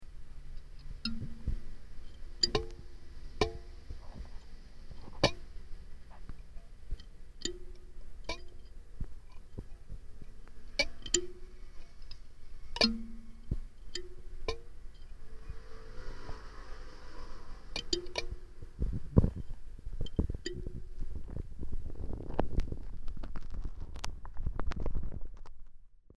creating the sound by touching the iron strings which tie around the ceramics
18 October 2012, ~17:00